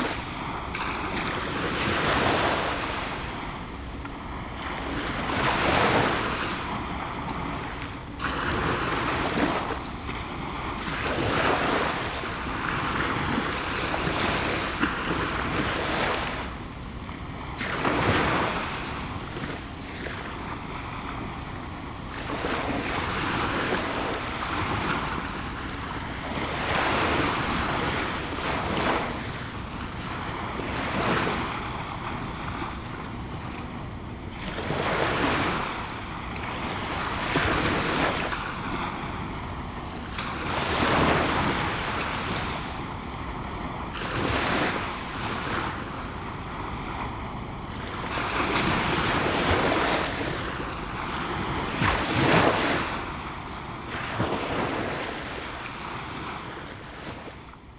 Rivedoux-Plage, France - The bridge beach
Recording of the small waves near the bridge of Ré.